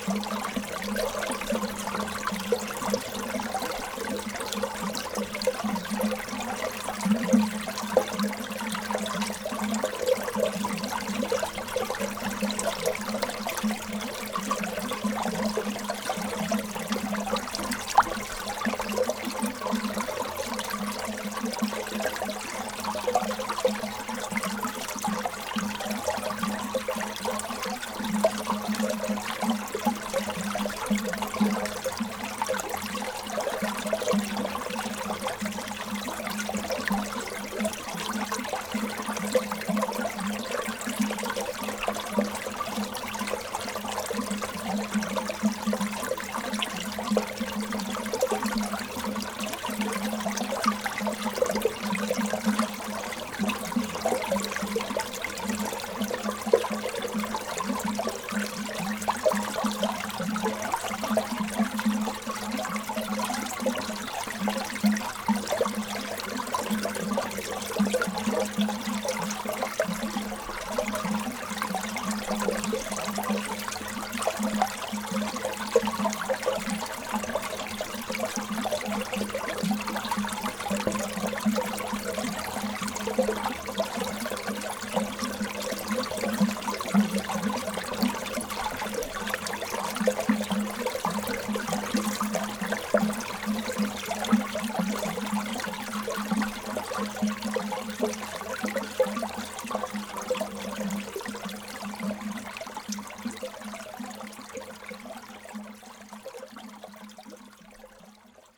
Differdange, Luxembourg - Water flowing
Into the underground ore mine, sound of water flowing in a tube.
16 April 2017